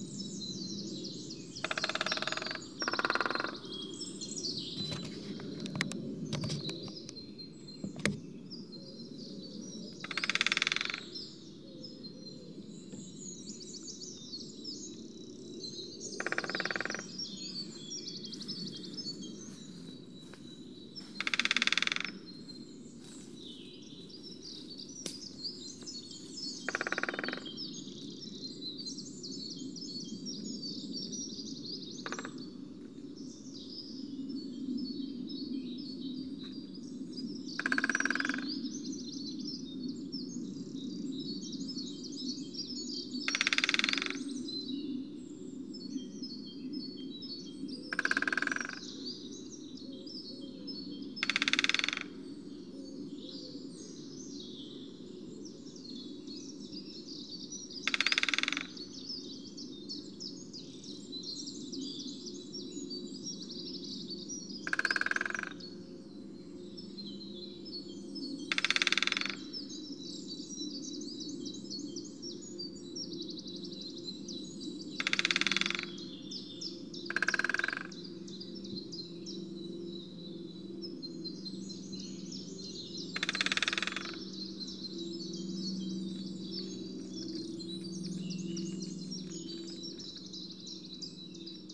Pamber Forest Nature Reserve, Silchester UK - Greater Spotted Woodpeckers drumming
There is talk of Lesser Spotted Woodpeckers in this location, ( I didn't see one). I spent the second of two mornings in this wonderful wood listening to Greater Spotted Woodpeckers drumming, it is their time I think. Sony M10 inside a parabolic reflector, an unedited recording including me making adjustments and rustling about.